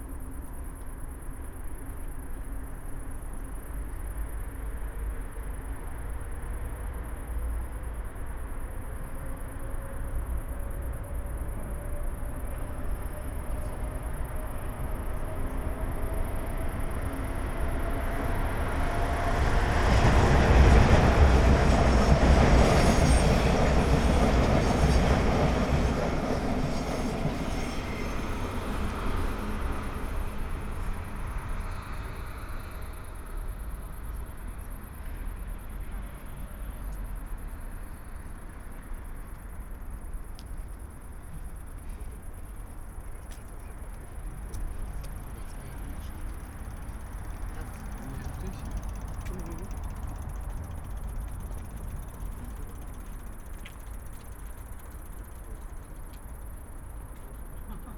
Beermannstr., Alt-Treptow, Berlin - A100 construction site, night ambience
Berlin, Beermannstr., construction site for the A100 Autobahn. The two houses at the edge of the road are still there, but will be demolished soon. In near future, the motorway will go straight through this place.
(Sony PCM D50, Primo EM172)
Berlin, Germany, 2 August 2015, ~00:00